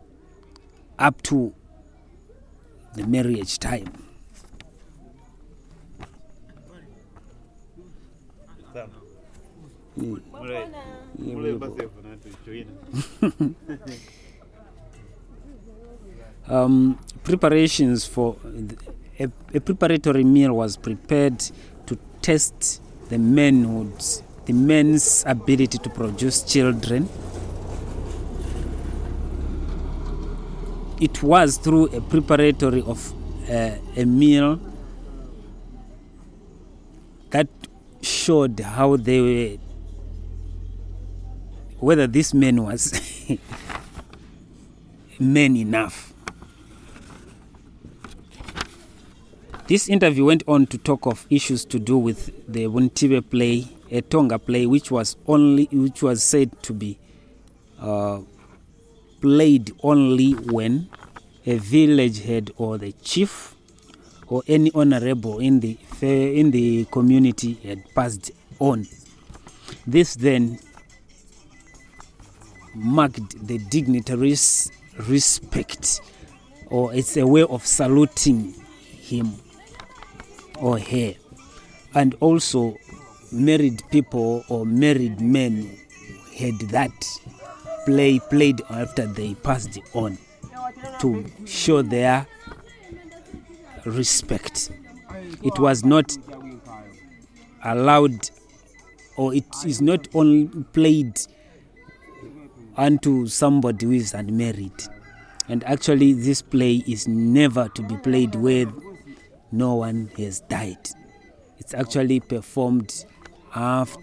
Mr Mwinde himself provides an English summary of the interview.
recordings from the radio project "Women documenting women stories" with Zubo Trust.
Zubo Trust is a women’s organization in Binga Zimbabwe bringing women together for self-empowerment.
Zimbabwe, 16 July 2016